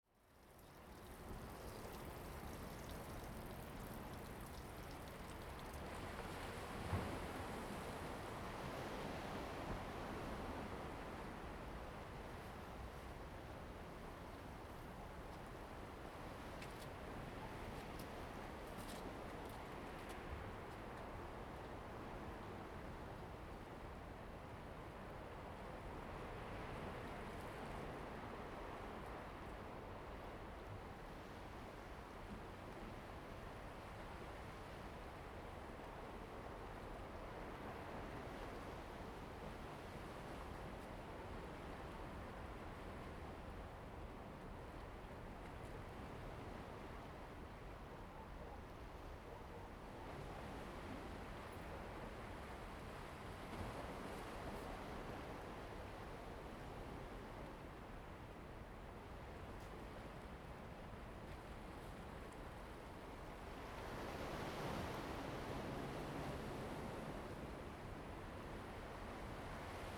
新湖漁港, Jinhu Township - On the bank
On the bank, Waves and tides
Zoom H2n MS+XY
福建省, Mainland - Taiwan Border, 3 November, 16:16